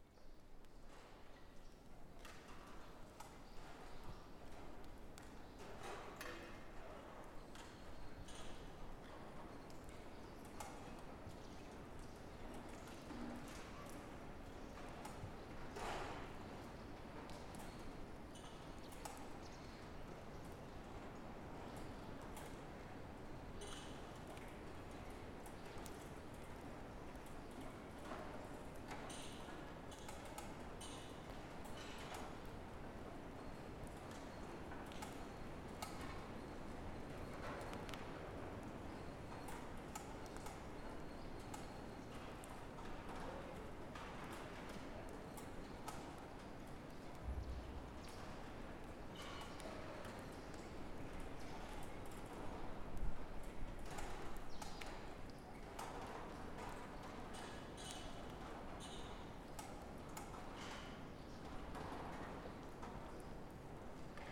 The last recording inside the great hall, the conditions were quite difficult since the wind was constantly blowing on this peak...